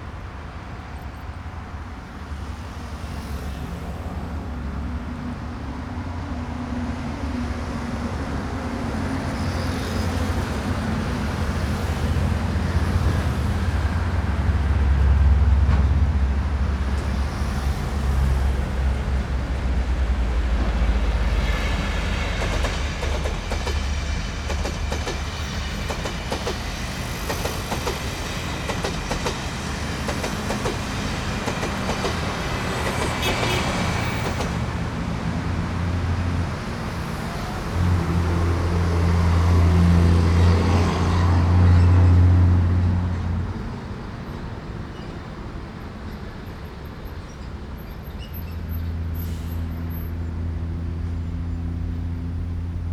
Warning tone, Train traveling through, Traffic Noise, Rode NT4+Zoom H4n
高雄市 (Kaohsiung City), 中華民國, 3 March 2012